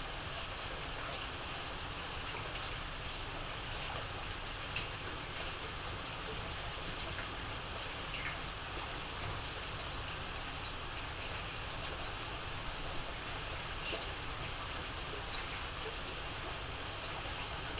Park am Nordbahnhof, Berlin, Germany - regen bei nacht
rain at night, regen bei nacht